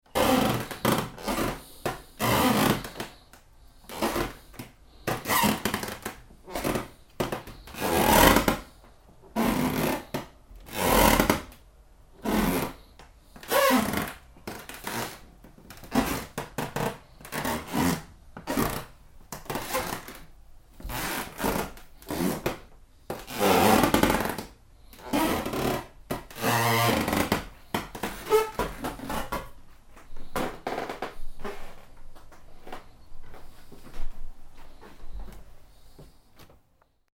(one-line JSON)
{"title": "St. Gallen (CH), creaking wooden floor, inside", "description": "recorded june 8, 2008. - project: \"hasenbrot - a private sound diary\"", "latitude": "47.43", "longitude": "9.39", "altitude": "669", "timezone": "GMT+1"}